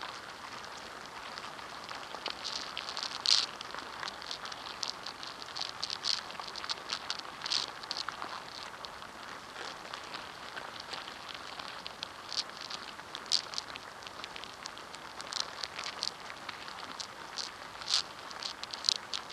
Strömbäck-Kont Nature Reserve, Inside an ants nest
Recording from inside an ant nest. Piezo mic. Mono.
27 April 2011, ~11:00